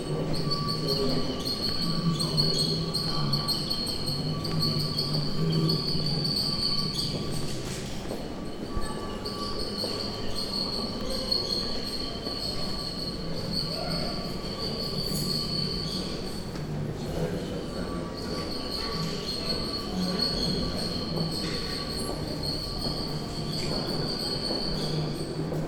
General atmosphere in the museums entrance hall. The anoying squeeky sound is produced by "Checkpoint Charley", an installation by Woody van Amen.
Zoom H2 recorder internal mics.
Deelgemeente Centrum, The Netherlands, 18 March 2012, ~15:00